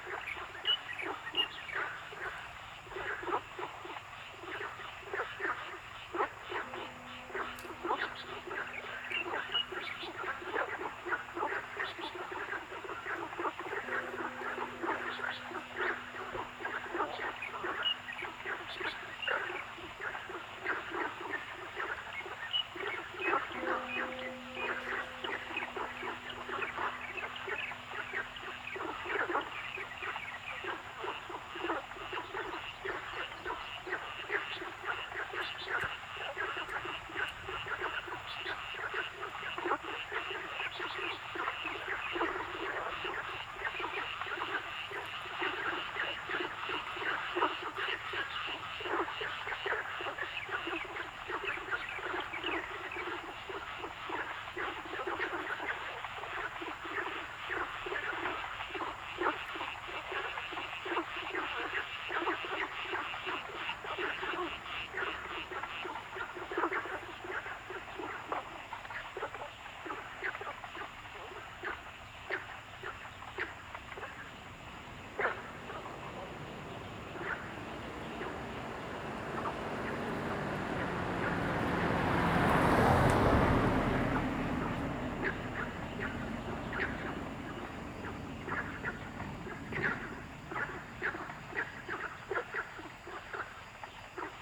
Zhonggua Rd., 桃米里 - Ecological pool
Bird sounds, Frog sounds
Zoom H2n MS+XY